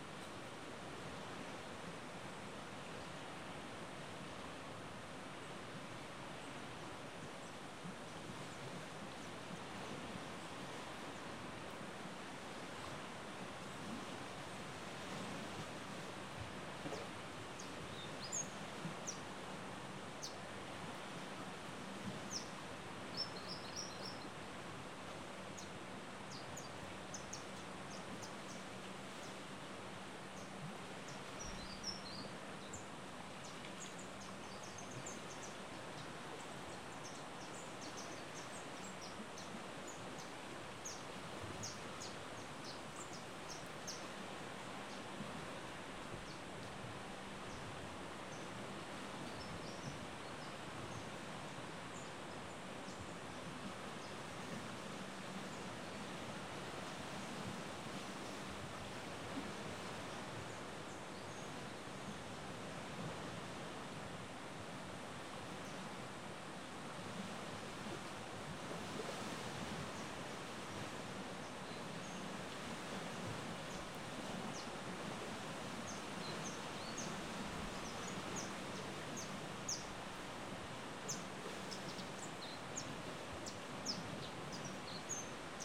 Cape Tribulation, QLD, Australia - myall beach in the morning
by the edge of the thick, spindly coastal vegetation.
recorded with an AT BP4025 into an Olympus LS-100.